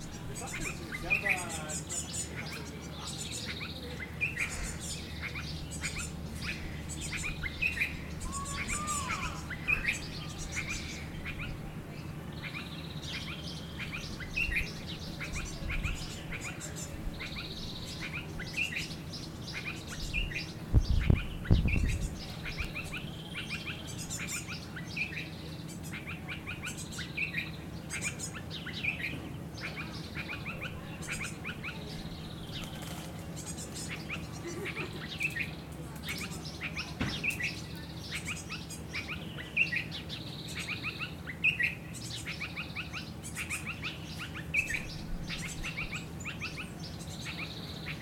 loading... - Jerusalem Botanical Gardens
Birds and frogs at the Jerusalem Botanical Gardens
מחוז ירושלים, ישראל, 30 April, 12:53